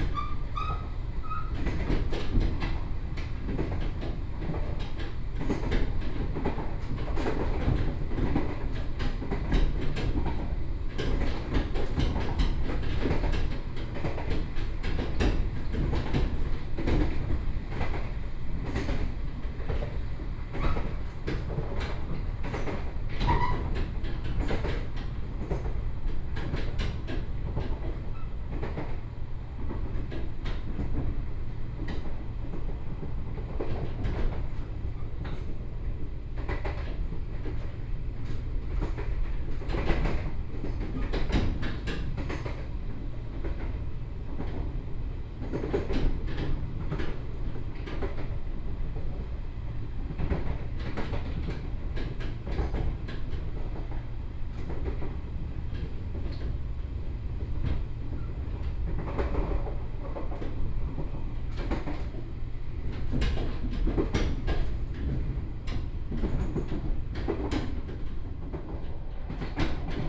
Bade City, Taoyuan County - Tze-Chiang Train
inside the Tze-Chiang Train, from Zhongli station to Taoyuan station, Zoom H4n + Soundman OKM II